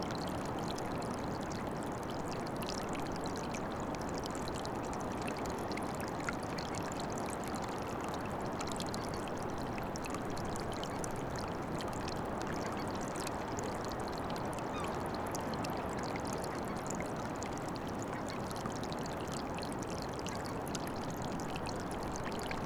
Whitby, UK - waterfall ette ...
Water running over small ledge into rock pool ... under Whitby East Cliffs ... open lavalier mics on mini tripod ... bird calls from ... herring gull ... fulmar ...
26 June 2014, 10:25